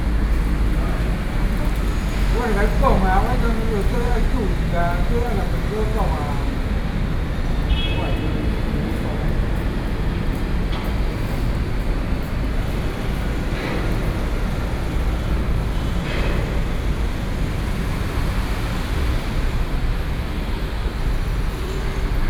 Taipei, Taiwan - The entrance to the MRT station